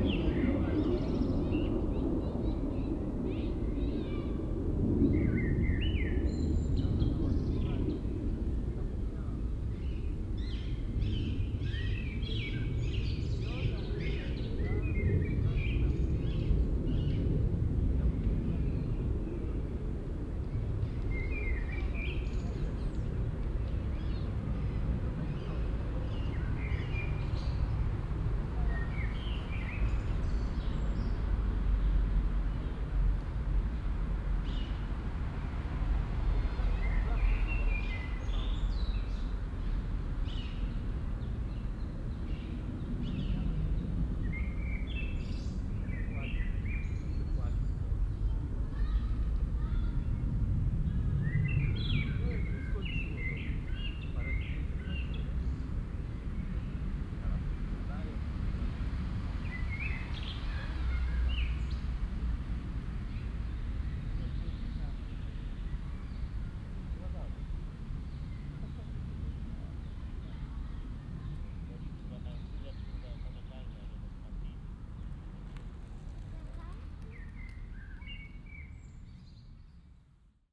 cologne, stadtgarten, parkbank weg süd
stereofeldaufnahmen im juni 2008 mittags
parkatmo und fliegerüberflug
project: klang raum garten/ sound in public spaces - in & outdoor nearfield recordings
parkbank weg süd, erste gabelung, 18 June 2008, 20:25